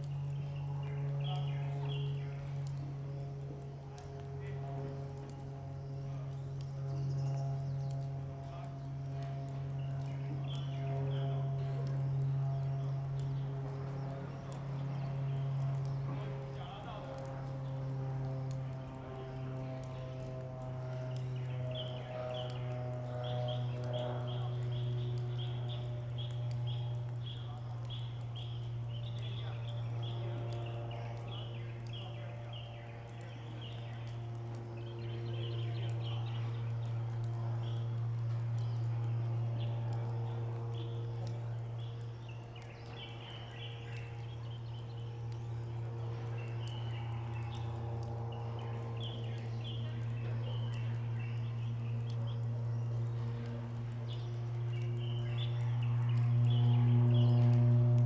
金峰鄉介達國小, Taitung County - playground
Bird sound, playground, Elementary school student, Physical education class, Dog barking, Water droplets, Small aircraft in the distance
Zoom H2n MS+XY
Jinfeng Township, 東64鄉道